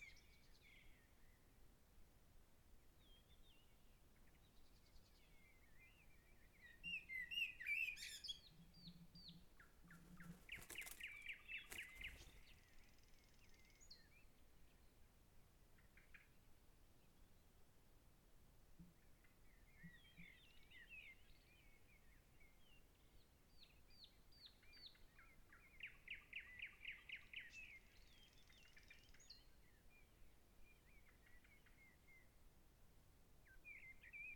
Nötö, Finland - Night-time birds on Nötö
Bird calls from the trees on Nötö in the night, around 23:30, with the sun barely gone down.